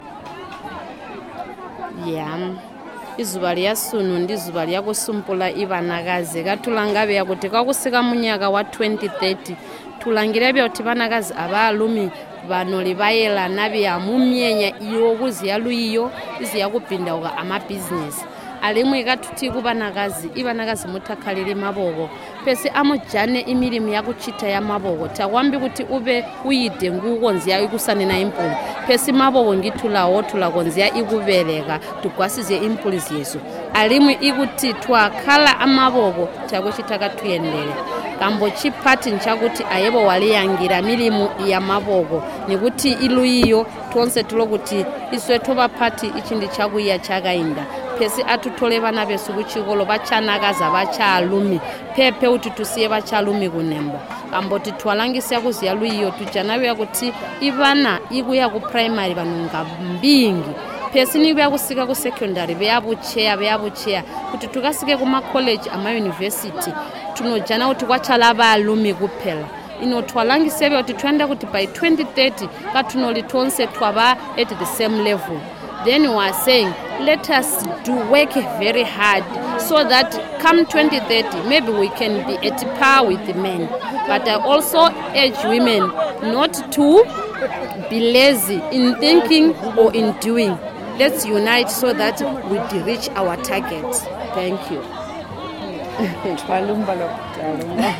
Freedom Square, Binga, Zimbabwe - today is an important day for women....
a statement by Sihle Dlamini of the Ministry of Women Affairs (ChiTonga/ English)
recordings from the first public celebration of International Women’s Day at Binga’s urban centre convened by the Ministry of Women Affairs Zimbabwe